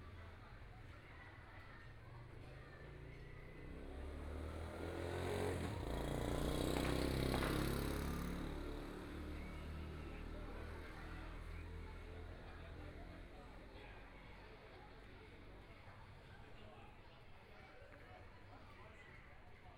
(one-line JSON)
{"title": "蕃薯村, Shueilin Township - in front of the temple", "date": "2014-01-30 22:03:00", "description": "The plaza in front of the temple, Very many children are playing games, Firecrackers, Motorcycle Sound, Zoom H4n+ Soundman OKM II", "latitude": "23.54", "longitude": "120.22", "timezone": "Asia/Taipei"}